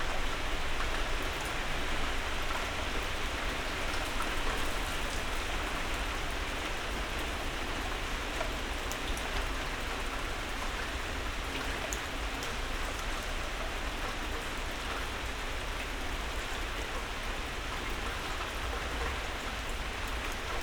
berlin, sanderstraße: unter balkon - the city, the country & me: under balcony
the city, the country & me: june 5, 2012
99 facets of rain
5 June, 03:39